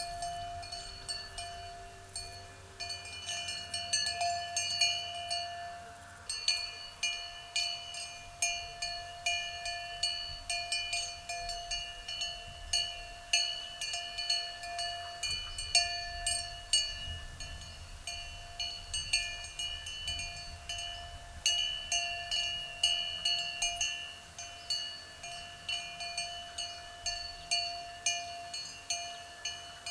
In a pasture field, a beautiful blend with cow bell and church bell. The Seine river is flowing quietly at the backyard.